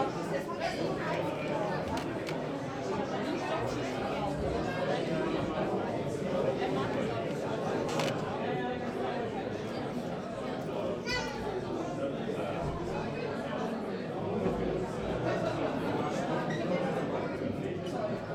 mainz, mombacher straße: portugiesisches vereinsheim u.d.p. - the city, the country & me: portuguese restaurant
portuguese restaurant of sports club U.D.P. (uniao desportiva portuguesa de mainz 1969), nice ambience and great food
the city, the country & me: october 16, 2010